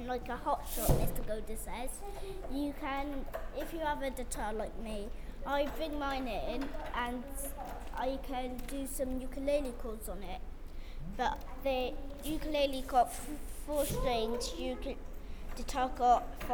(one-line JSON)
{"title": "Main hall music cupboards 3/4L", "date": "2011-03-08 11:05:00", "latitude": "50.39", "longitude": "-4.10", "altitude": "72", "timezone": "Europe/London"}